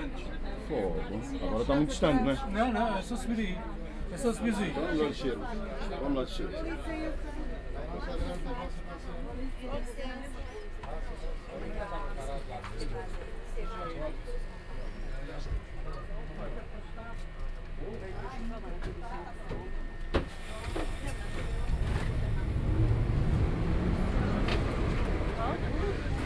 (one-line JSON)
{"title": "Lisbon, Escolas Gerais - street corner", "date": "2010-07-03 12:05:00", "description": "conversation on the street, tram 28 arriving, departure. binaural, use headphones", "latitude": "38.71", "longitude": "-9.13", "altitude": "62", "timezone": "Europe/Lisbon"}